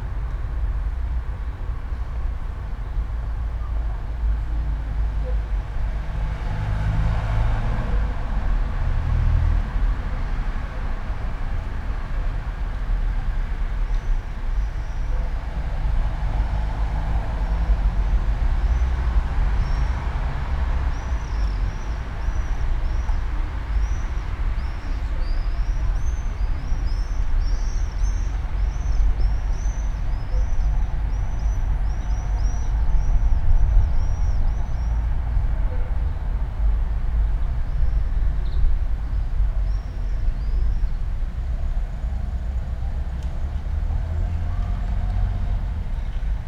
all the mornings of the ... - jul 25 2013 thursday 09:15
Maribor, Slovenia